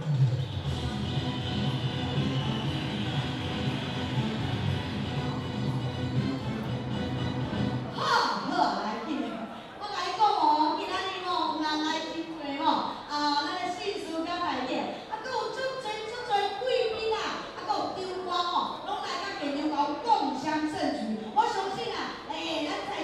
Daren St., Tamsui Dist., 新北市 - Host
Folk Evening party, Dinner Show, Host
Zoom H2n MS+XY
Tamsui District, New Taipei City, Taiwan, 22 June 2015